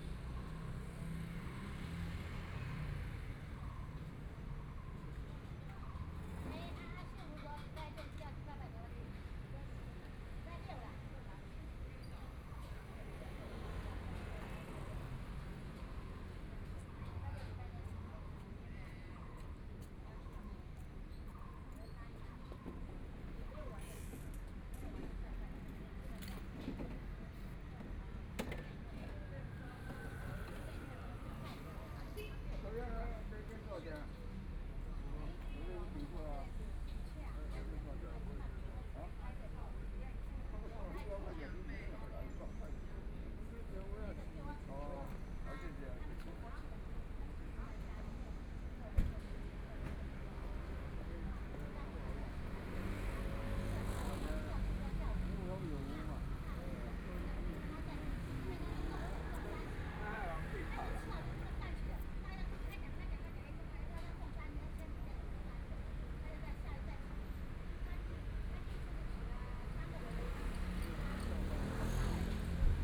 In the corner of the park, Community-based park, Traffic Sound, A group of people chatting, Binaural recordings, Zoom H4n+ Soundman OKM II